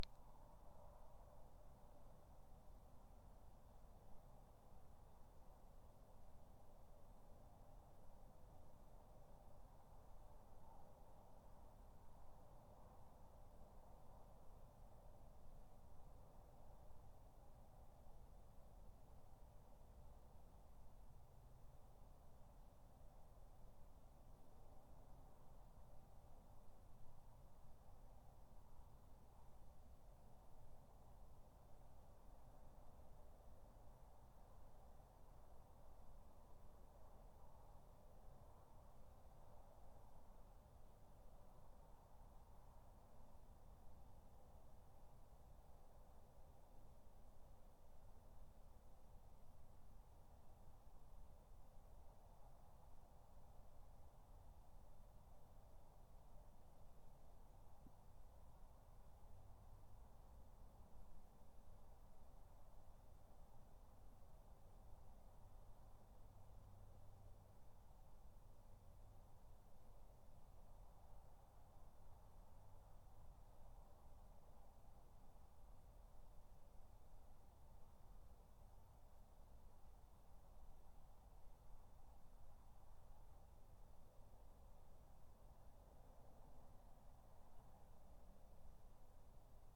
These recordings were made as part of my final project for my MA Music course at Oxford Brookes. For the project I recorded my back garden in Dorridge for 3 Minutes, every hour for 24 hours. I then used the audio as the basis for a study into the variety of sounds found in my garden. The results of the audio were also pieced together in chronological order to create a sound collage, telling the story of a day in the life of my back garden.